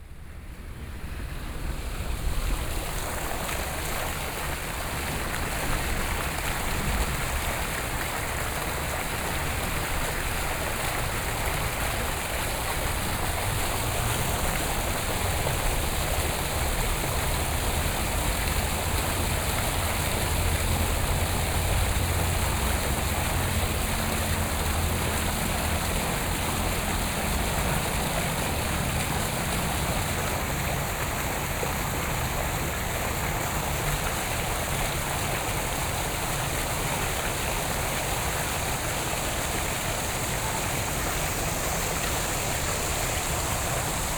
碧湖公園, Taipei City - The sound of water
The sound of water, Traffic Sound
Sony PCM D50+ Soundman OKM II
Taipei City, Taiwan, 9 July 2014